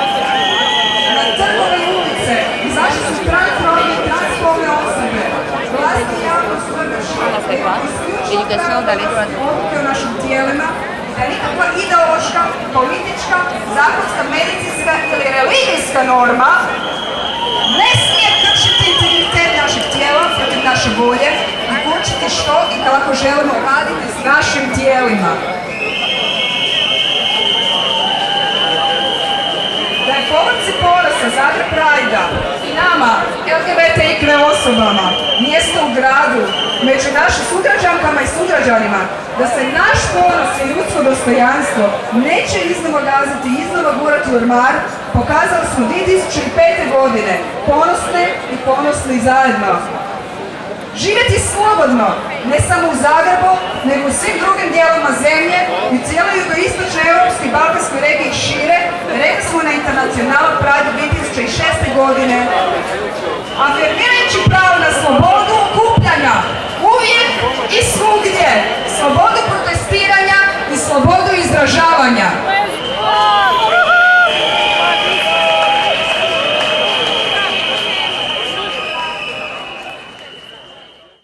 Zagreb Pride 2011. 4 - Recapitulation of the first 10 years
recapitulation of the first 10 years